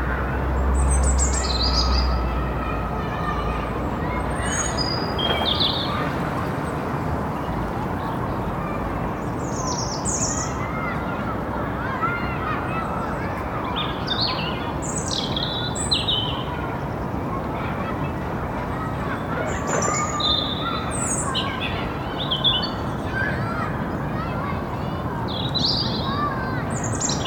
Sussex Rd, Watford, UK - Sunny backyard.
In my back garden enjoying a day off work with trains, plans, children playing, bird song, a beautiful sunny 6c day in urban Watford, Hertfordshire. MixPre 10 II with my MKH50 boomed 4 meters aloft pointing north. 2 poly.
England, United Kingdom, 21 January 2020